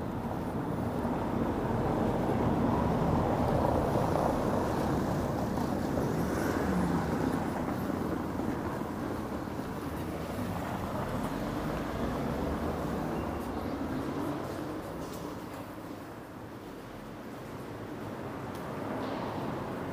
berlin nikolassee, station
recorded nov 14th, 2008.